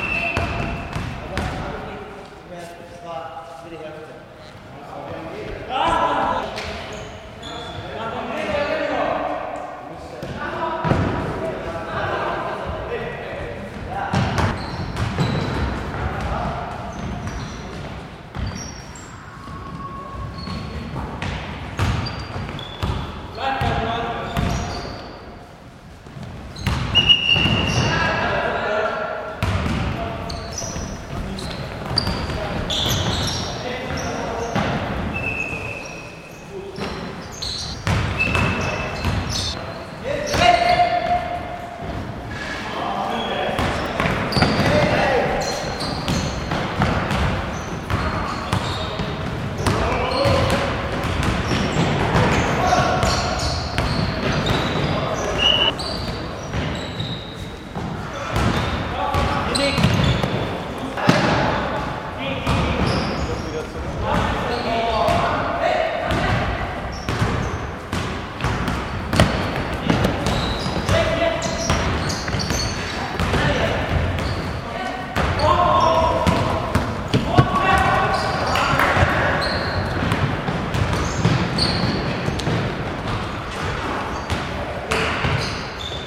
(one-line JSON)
{"title": "Prague, Czech Republic - Deutsche Gymnasium Praha", "date": "2001-09-08 15:12:00", "description": "German Gymnasium in Prag, Schwarzenberská 1/700, gym hall basketball match. The recording was used in 2001 for a sound installation in a gallery in Linz.", "latitude": "50.06", "longitude": "14.35", "altitude": "324", "timezone": "Europe/Prague"}